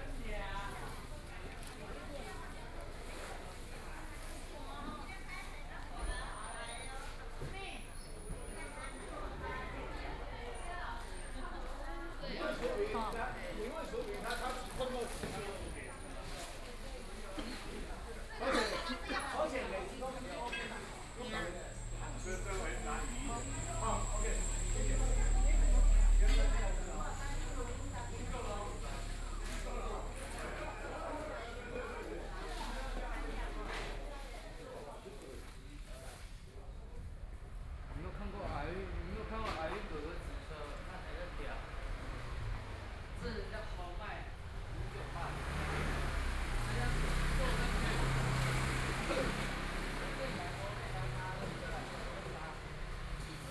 Walking through the town's market, Traffic Sound, Binaural recording, Zoom H6+ Soundman OKM II